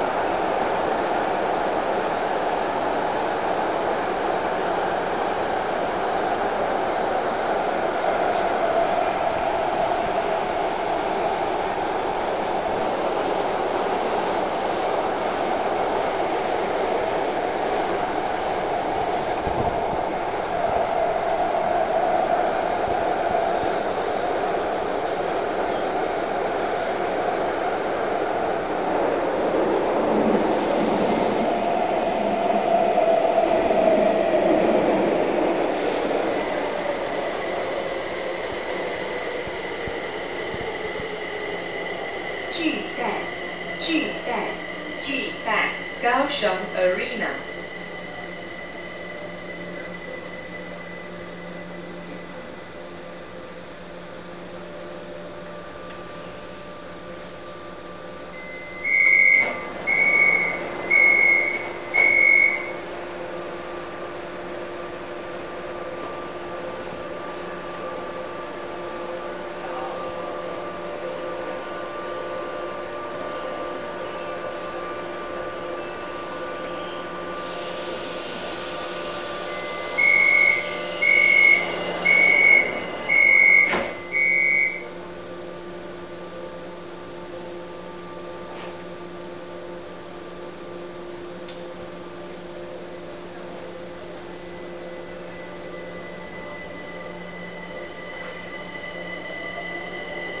KRTC (Subway) Arena - Main Station
2009, Oct, 20th. On the Path from Arena to Main staion, Red Line